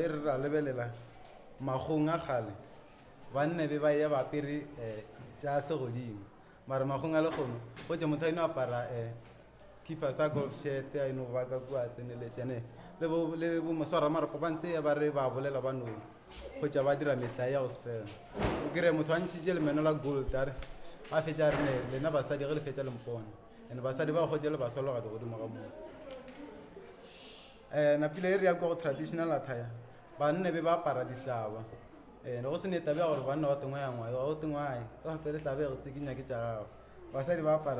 Mphahlele, South Africa - Kgagatlou Secondary School
Keleketla! Library workshop for publication at Kgagatlou Secondary school in Ga-Mphahlele. The purpose of the workshop was towards developing new content for our second publication 58 Years To The Treason Trial.